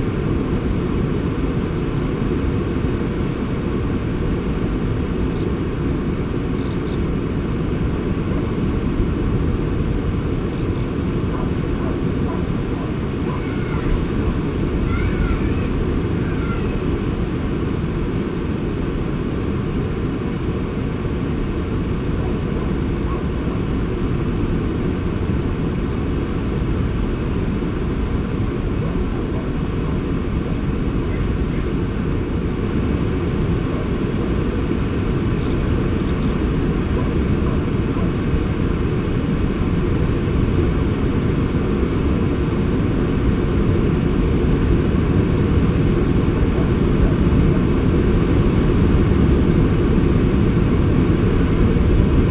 sand martins under the cliff ... colony ... one point stereo mic to minidisk ... background noise of waves ... dogs ... voices ...